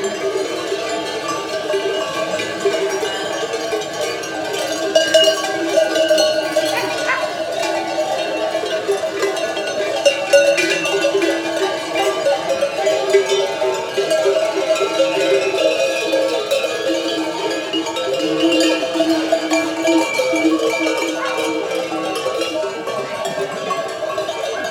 SBG, Font de la Teula - rebaño
Rebaño cruzando apresuradamente el sendero.